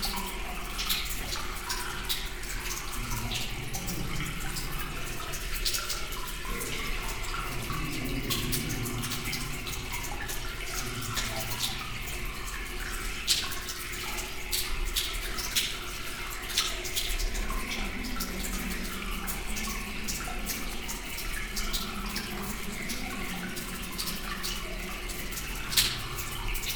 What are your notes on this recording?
Into an underground train tunnel, sound of water flowing into a 130 meters deep pit.